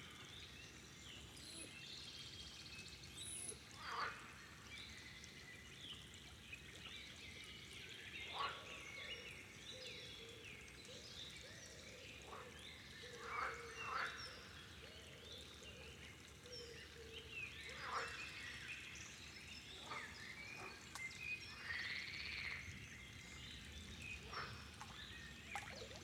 Wild Meadow Summer-Dörflis Nature Park Haßberge Germany - Wild Meadow Summer
You can hear the wonderful sound of a wild meadow typical for this area. This place is full of life you can hear different insects and beetles, in a little further distance you can recognize the singing of different species of birds
Setup:
EarSight mic's stereo pair from Immersive Soundscapes